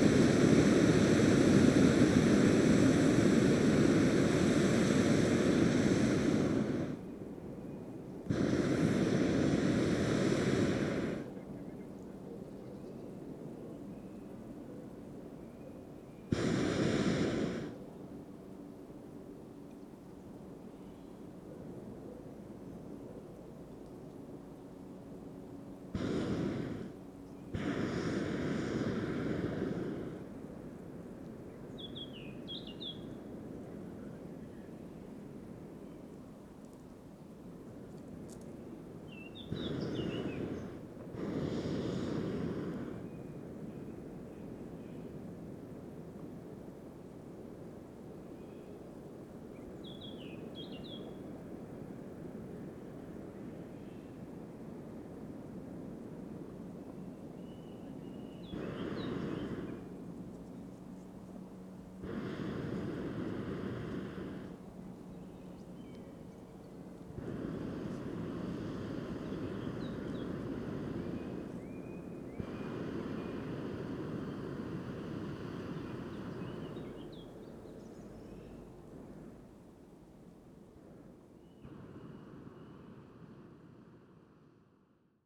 Lithuania, Utena, hot air baloon over forest
sounds from Lithuanian XIX hot air balloons championship